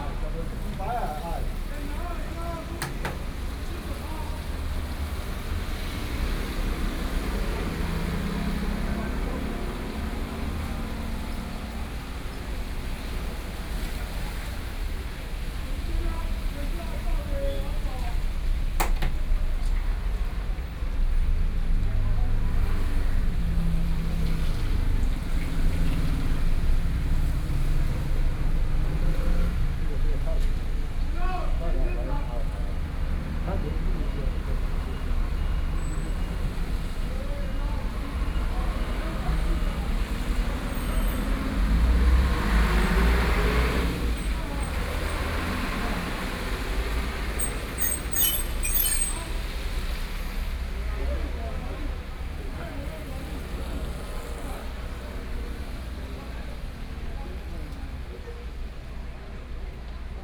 Nanfang-ao, Su'ao Township - Chat
A group of taxi drivers chatting and playing chess, There are close to selling fish sounds, Binaural recordings, Zoom H4n+ Soundman OKM II
Yilan County, Taiwan, 7 November 2013, 11:28